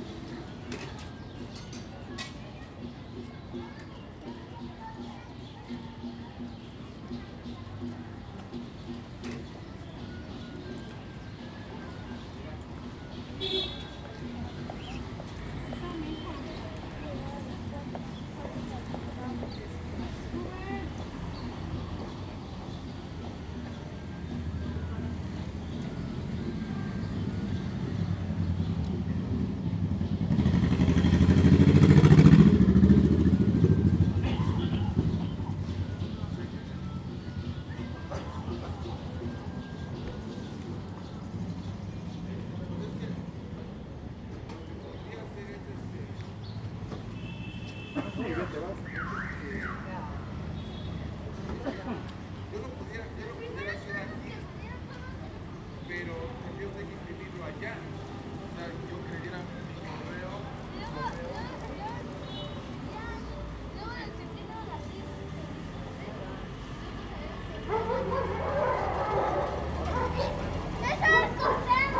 Escandón, Ciudad de México, D.F., Mexico - El barrio
Just around the corner! Mexico City!